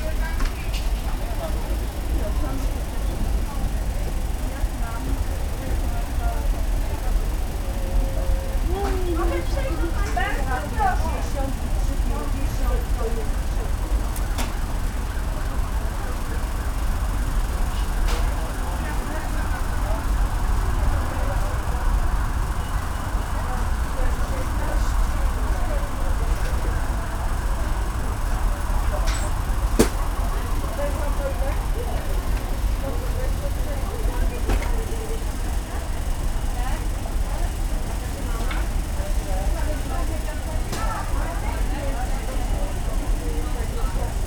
Jezycki Market, Poznan - market fan
recored at a vegetable market located in the heart od Jezyce district. vendors offering their goods, packing items. rumor of customers moving around, asking about prices and availability of produce. traffic from streets around the market. clearly audible rattle and hum is coming from a nearby fan attached to the stall in order to move air under thick stall roofing. (roland r-07)